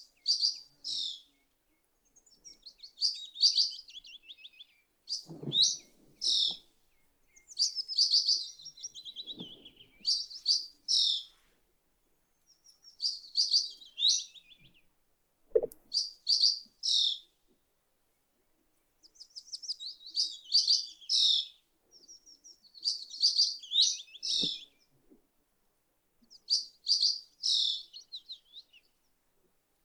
Ulkokarvo, Hailuoto, Finland - Birds in Ulkokarvo
Birds singing on a calm summer day in Ulkokarvo. Zoom H5 with default X/Y module. Wind, noise and gain adjusted in post.
Manner-Suomi, Suomi, 23 May 2020, 11:00